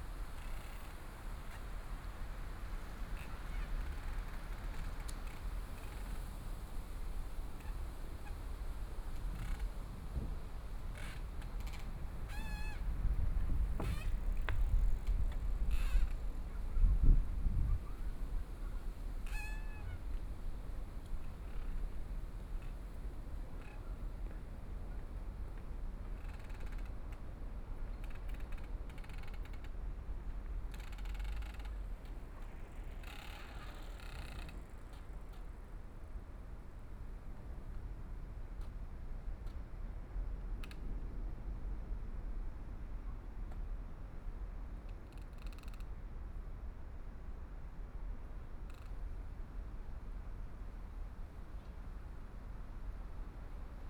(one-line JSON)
{"title": "Taitung City, Taiwan - winds", "date": "2014-01-16 15:54:00", "description": "Sound produced by the wind branches, Horsetail Tree, The distant sound of the waves, Dialogue among the tourists, Binaural recordings, Zoom H4n+ Soundman OKM II ( SoundMap2014016 -12)", "latitude": "22.75", "longitude": "121.17", "timezone": "Asia/Taipei"}